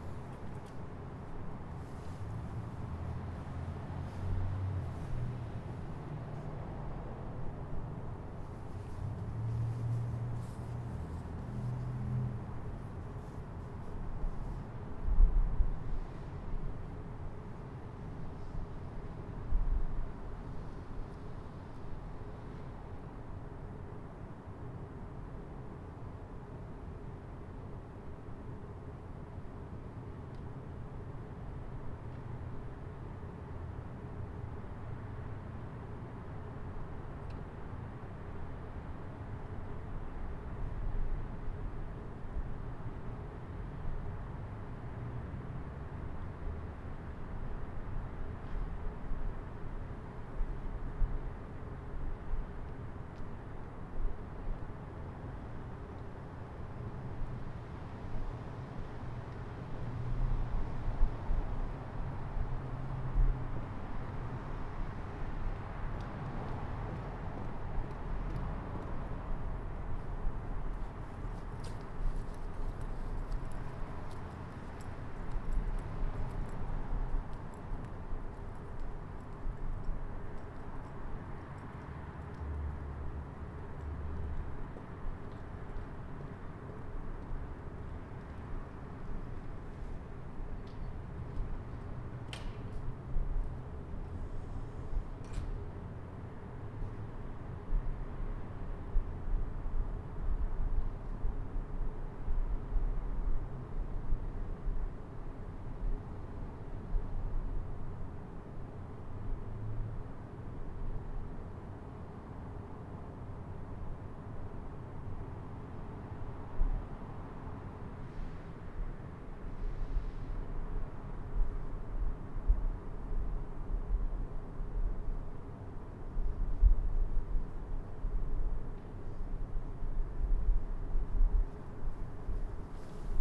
N Nevada Ave, Colorado Springs, CO, USA - East Campus Apartments
A soundscape of the new East Campus Appartments facing North. The recorder is on a tripod placed on the rim of the fireplace near the quad. It is about 2ft off the ground.
26 April 2018, 21:16